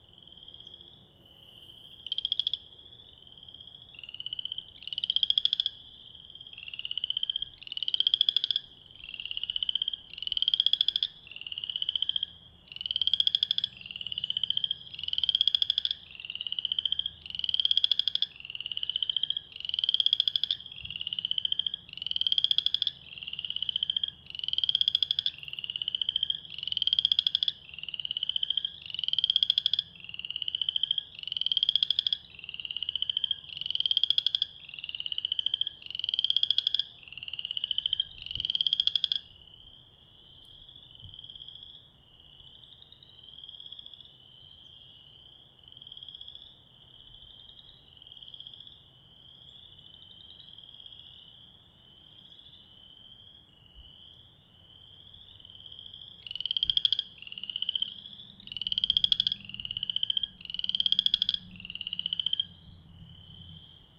Greentree Pond Spring Peeper, Kirkwood, Missouri, USA - Greentree Pond Spring Peeper
A boisterous spring peeper (chorus frog) in a seasonal pond in Greentree Park.